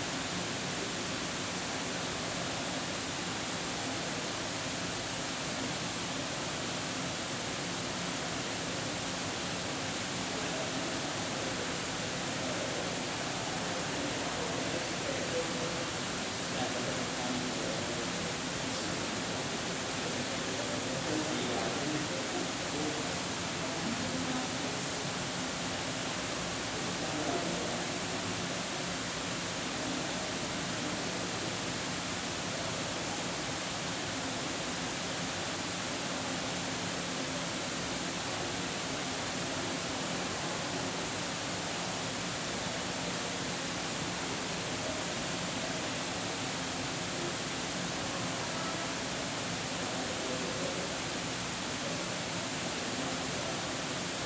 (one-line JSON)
{"title": "Cl. Barranquilla #53 - 108, Medellín, Aranjuez, Medellín, Antioquia, Colombia - Fuente UdeA", "date": "2022-09-02 13:48:00", "latitude": "6.27", "longitude": "-75.57", "altitude": "1464", "timezone": "America/Bogota"}